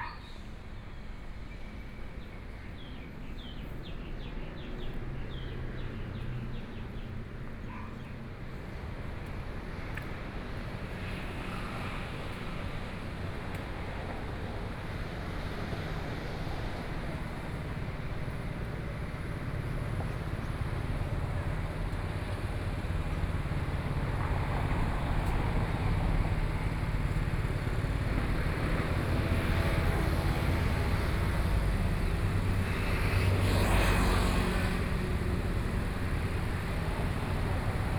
Xinxing Rd., Taipei City - walking on the Road
walking on the Road, Traffic Sound, Birdsong, Dogs barking